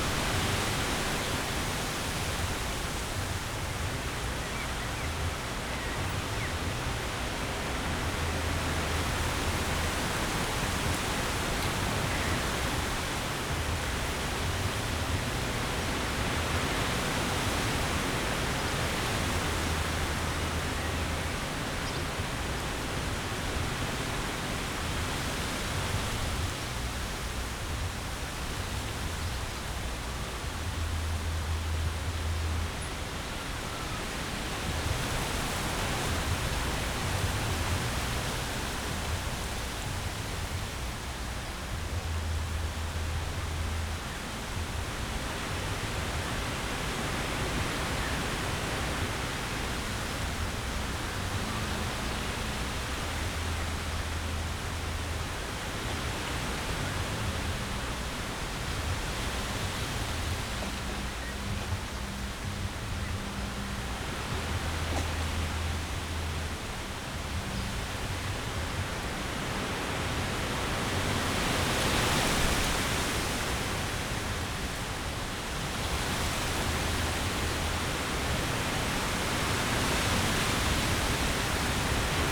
Weekend afternoon late spring, a fresh wind in the poplar trees, drone of a remote sound system
(Sony PCM D50, DPA4060)
Berlin, Germany